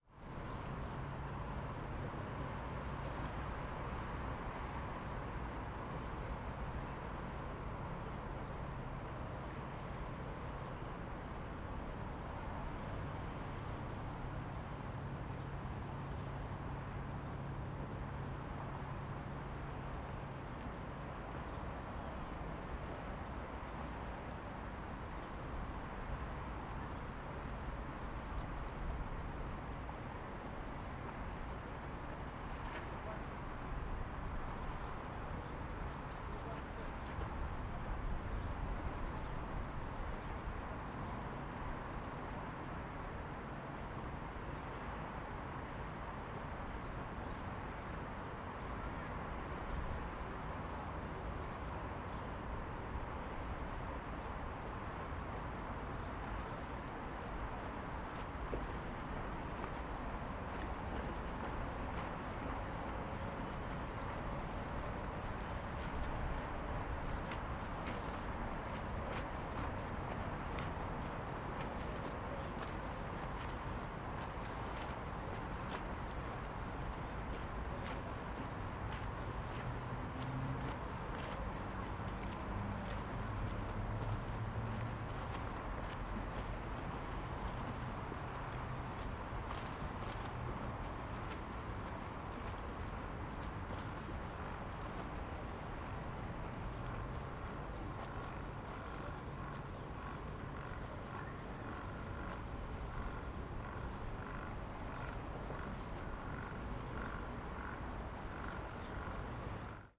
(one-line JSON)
{"title": "bulwar Xawerego Dunikowskiego, Wrocław, Polska - Riverside Afternoon Near the Bridge", "date": "2021-07-20 15:00:00", "description": "Windy Tuesday afternoon. Recorded with Sony PCM D100 on a Rycote suspension and Manfrotto Pixi mini tripod. In addition to the standard Sony windscreen, I have used Rycote Baby Ball Gag, but still, some wind has gone through.", "latitude": "51.11", "longitude": "17.05", "altitude": "121", "timezone": "Europe/Warsaw"}